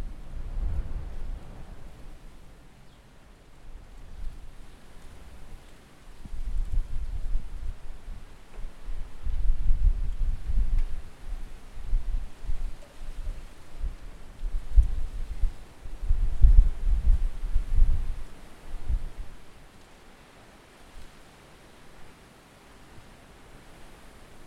Επαρ.Οδ. Φιλώτας - Άρνισσα, Αντίγονος 530 70, Ελλάδα - Storm
Record by: Alexandros Hadjitimotheou
Περιφέρεια Δυτικής Μακεδονίας, Αποκεντρωμένη Διοίκηση Ηπείρου - Δυτικής Μακεδονίας, Ελλάς